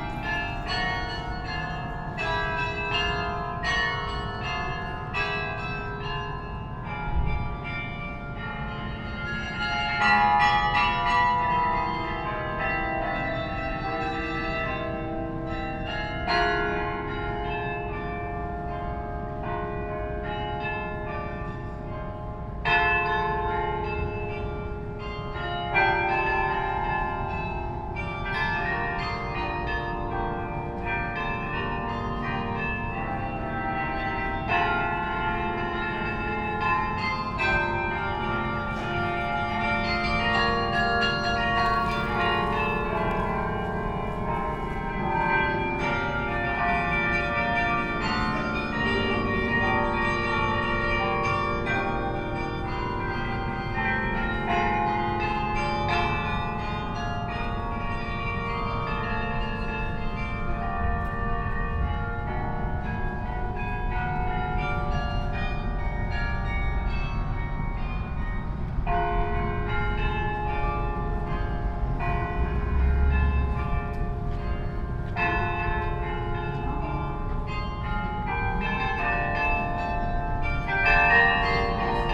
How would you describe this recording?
Brugge Belfort Carillon - Bohemian Rhapsody - 2nd October 2019 11:37. Field recording of the Brugge Belfort Carillon performing a rendition of Bohemian Rhapsody. Gear: Sony PCM-M10 built-in mics